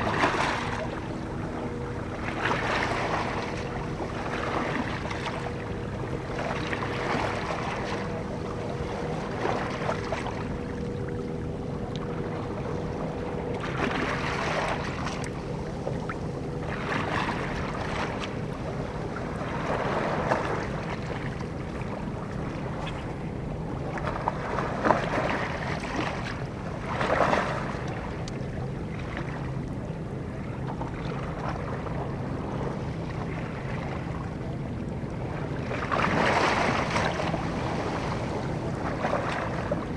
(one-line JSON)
{"title": "beach near Sasino, medium waves", "date": "2011-08-14 12:41:00", "latitude": "54.80", "longitude": "17.74", "timezone": "Europe/Warsaw"}